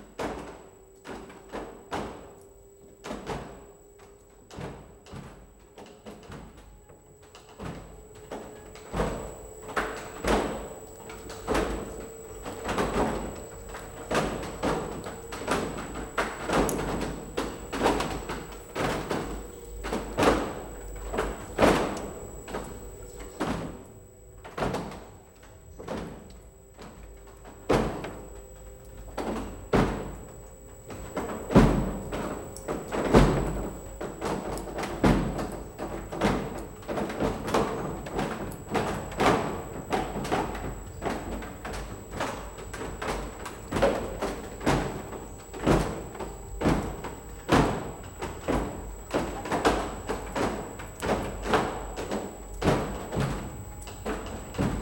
ratingen, cromforder allee, cromford museum - ratingen, cromforder allee, cromford museum 3
zentraler wasserantrieb der cromford web-
maschinen - langsamer anlauf und betrieb
soundmap nrw
topographic field recordings and social ambiences